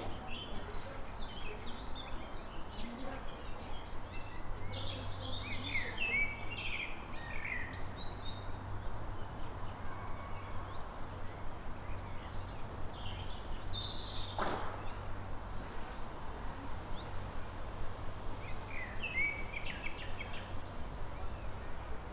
{"title": "Anykščių g., Kaunas, Lithuania - Calm suburban atmosphere", "date": "2021-05-14 15:20:00", "description": "Calm and idyllic atmosphere in the inner city suburban neighborhood. Birds, distant traffic, one car passing by at one time, sounds of people working in the distance. Recorded with ZOOM H5.", "latitude": "54.91", "longitude": "23.92", "altitude": "73", "timezone": "Europe/Vilnius"}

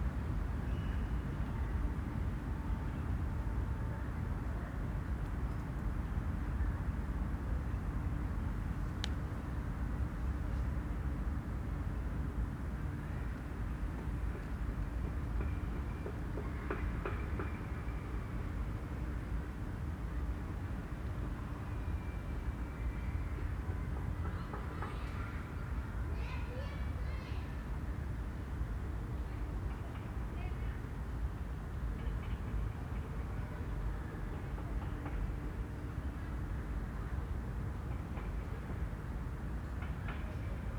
{"title": "Berolinastraße, Berlin, Germany - Quiet amongst the apartment blocks, distant city", "date": "2021-09-01 18:19:00", "latitude": "52.52", "longitude": "13.42", "altitude": "39", "timezone": "Europe/Berlin"}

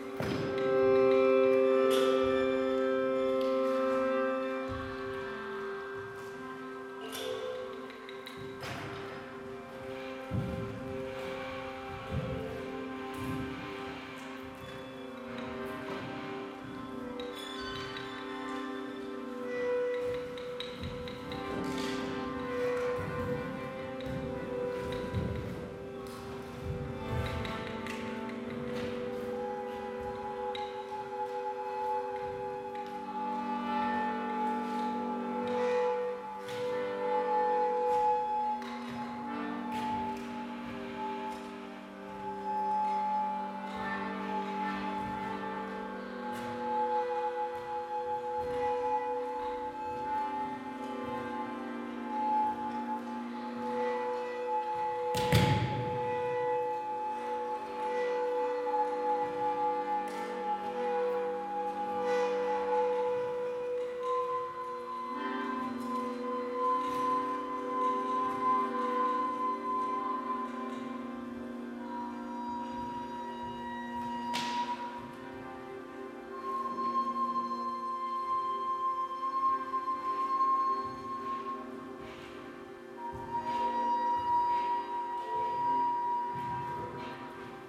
{"title": "military bunker improvisation, Riga Latvia", "date": "2008-06-27 00:20:00", "description": "underground military bunker improsiation, Riga Latvia", "latitude": "57.06", "longitude": "24.25", "altitude": "8", "timezone": "Europe/Berlin"}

